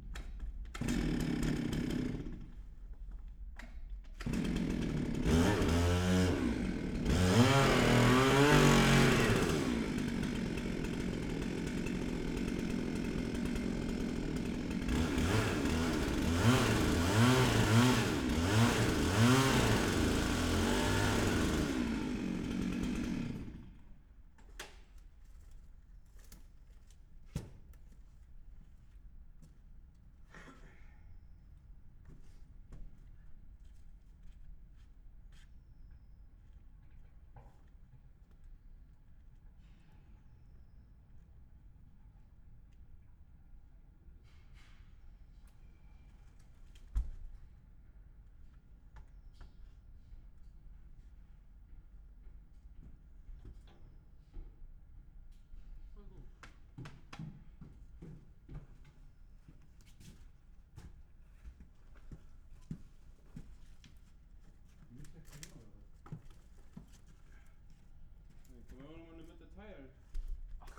Berlin Bürknerstr., backyard window - the end of a tree
Berlin, Bürknerstr.9, my backyard. Workers cutting down a tree. Final cut. It was no a good-looking one, but has been part of my daily view, a place for birds etc., listened many autumns to its falling leaves. Gone, causes me sort of pain. Start of a renovation process. This ol' messy backyard goes antiseptic...
(Sony PCM D50, Primo EM172)